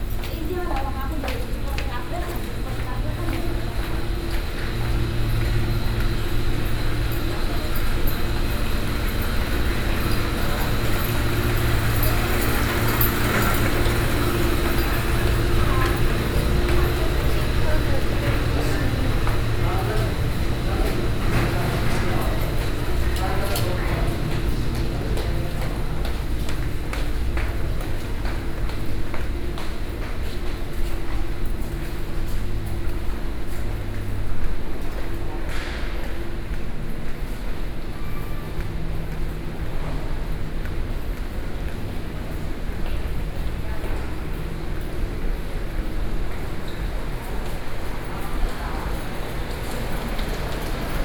Dàtóng Rd, Danshui District - Crossing walking in the hospital